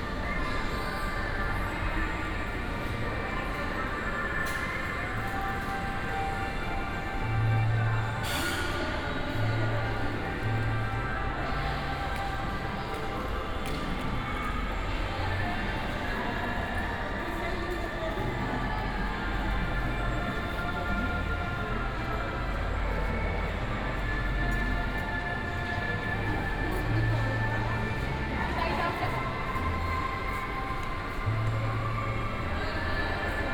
pasio del puerto, Valparaíso, Chile - passage walk, ambience
Valparaiso, passage to the harbour and train station, ambience, short walk
(Sony PCM D50, OKM2)